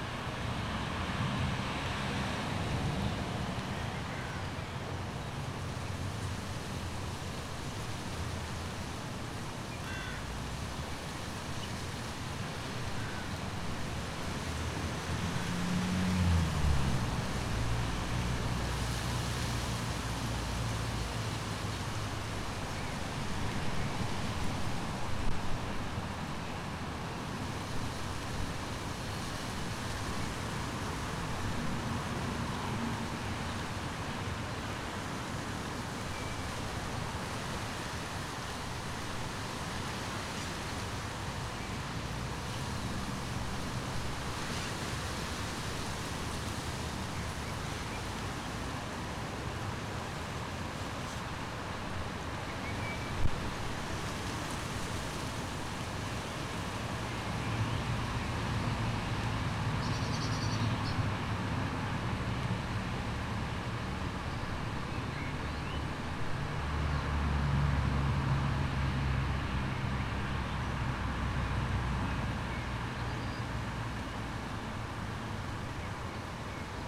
St Marys Graveyard, Oakley, UK - Napping in Graveyard
Crows and creaking trees during a windstorm while I napped, exhausted from a long bike ride, at a graveyard of a 14th century church.